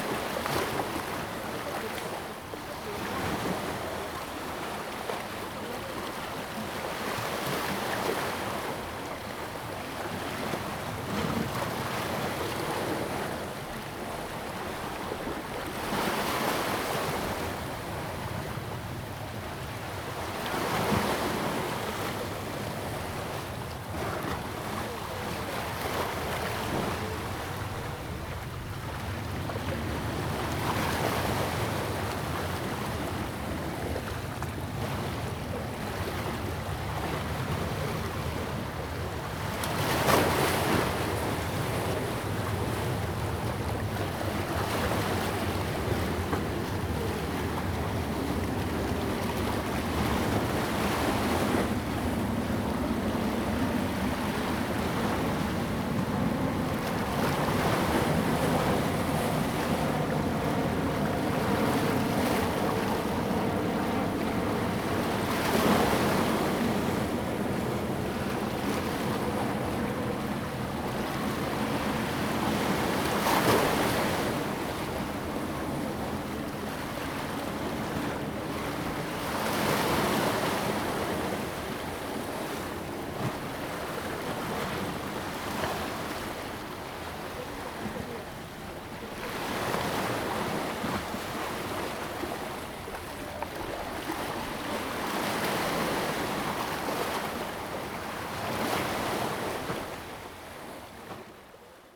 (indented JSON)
{
  "title": "六塊厝漁港, New Taipei City, Taiwan - Waves lapping the pier",
  "date": "2016-04-16 07:35:00",
  "description": "the waves, Small fishing pier, Waves lapping the pier\nZoom H2n MS+XY",
  "latitude": "25.24",
  "longitude": "121.45",
  "altitude": "3",
  "timezone": "Asia/Taipei"
}